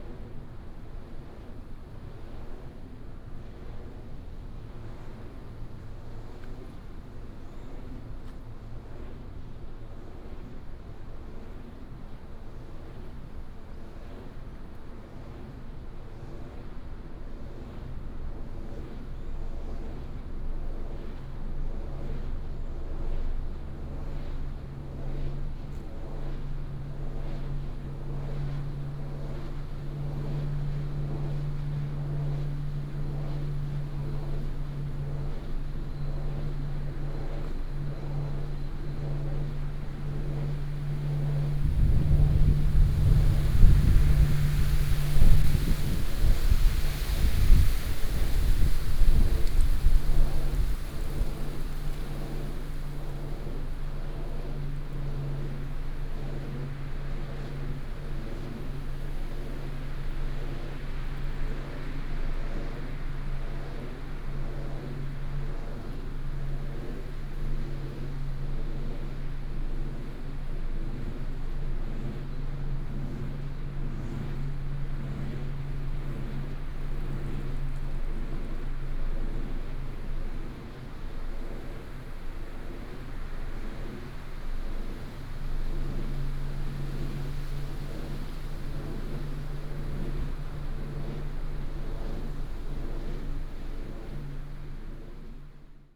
Zhunan Township, Miaoli County - wind and Wind Turbines
Seaside bike lane, wind, Wind Turbines, Binaural recordings, Sony PCM D100+ Soundman OKM II